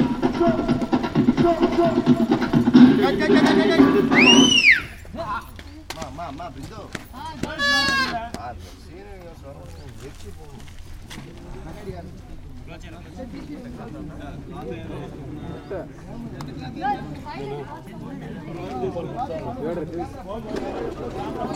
India, Karnataka, Hampi, Kollywood, filming, cinema
Karnataka, India, 26 February 2009, 12:59